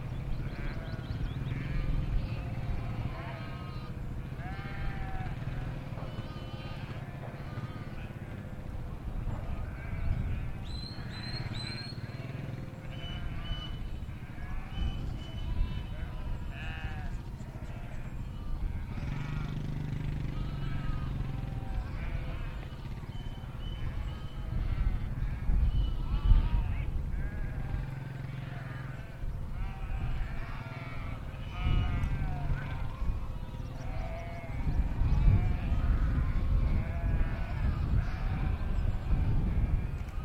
Otterburn Artillery Range
Shepherds herding their flock along side the entrance gate to Otterburn Camp.